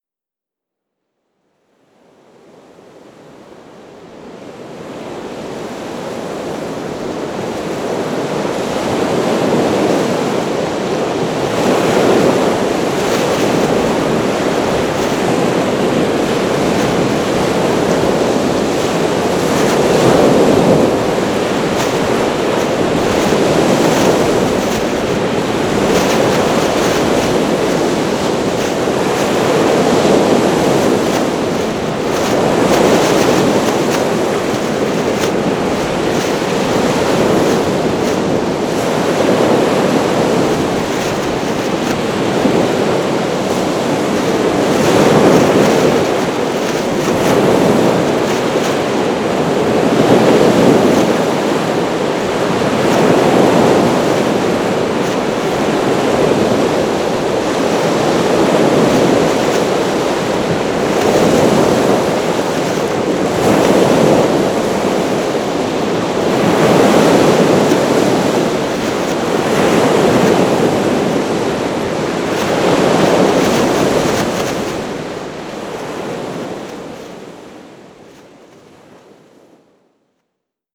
Wyspa Sobieszewska, Gdańsk, Poland - Morze dzień
Morze dzień rec. Rafał Kołacki
8 June 2015, ~13:00